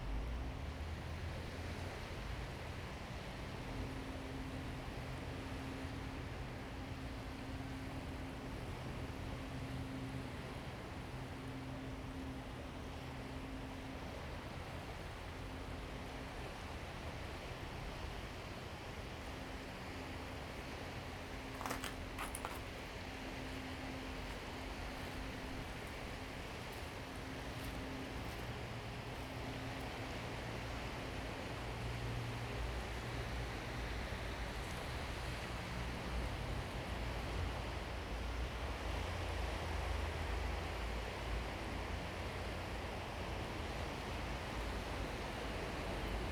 同安渡頭, Kinmen County - Small pier
Small pier, Chicken sounds, Sound of the waves
Zoom H2n MS+XY